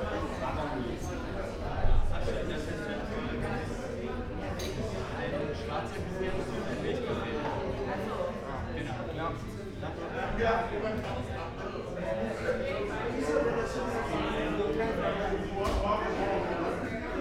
Cafe Kirsche, Böckhstraße, Berlin - cafe ambience
Saturday afternoon, Cafe Kirsche, former pharmacy, corner Kottbusser Damm / Boeckhstr., one of the many new stylish cafes in this neighbourhood, crowded cafe ambience
(Sony PCM D50, Primo Em172 mics)
Berlin, Germany